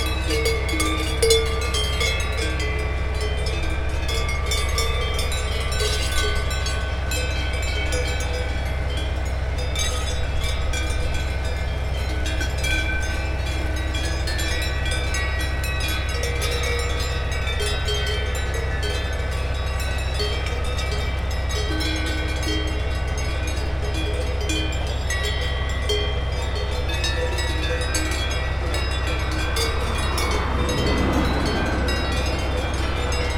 Aillon-le-Jeune, France - Troupeau de vaches
Troupeau avant la traite .